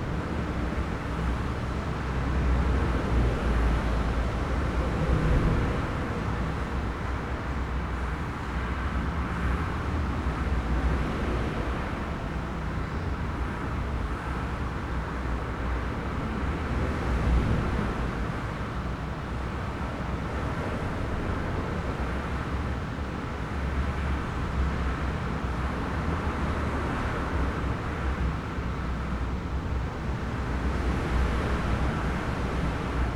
Trowell, UK - 0ver the M1 ...
Over the M1 ... on the walkway over the M1 that joins the services at Trowell ... Olympus LS 11 integral mics ... footsteps ... conversations ... traffic ...
Nottingham, UK, 15 May 2017, 14:00